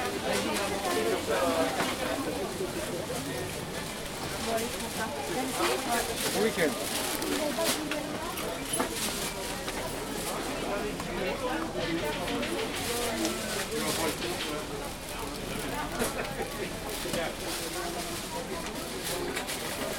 Av. de Saxe, Paris, France - Passing through the market rue de Saxe, Paris
Walking through the market, rue de Saxe, Paris, Saturday morning
France métropolitaine, France, 9 October 2021